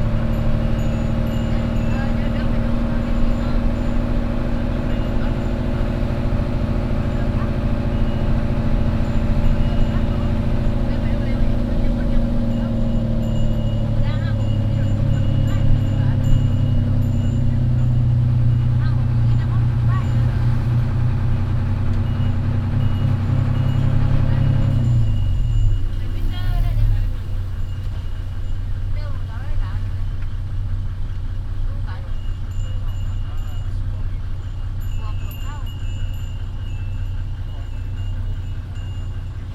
Amphoe Phunphin, Chang Wat Surat Thani, Thailand - Bus in Surathani - dick und rot und uralt
A bus from the train station to the center of Surathani. The vehicle is amazing, around 50 years old, very slow, a beautiful sound, picking up people wherever someone shows up.